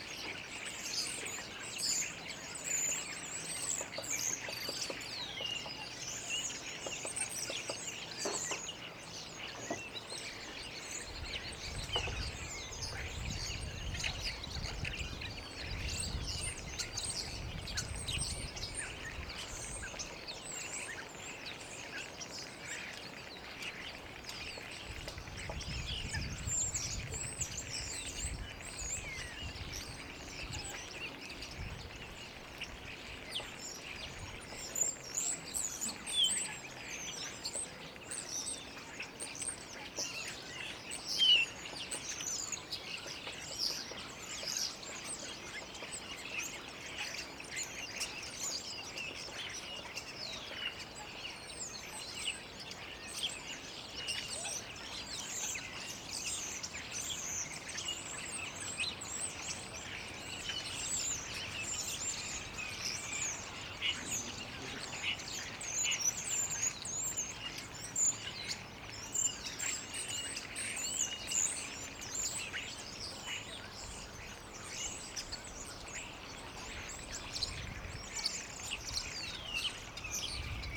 Kirchmöser Ost - starlings, woodpecker, ambience
Kirchmöser, garden ambience /w starlings, woodpecker and others
(Sony PCM D50)
Brandenburg, Deutschland, 6 August 2022, 11:55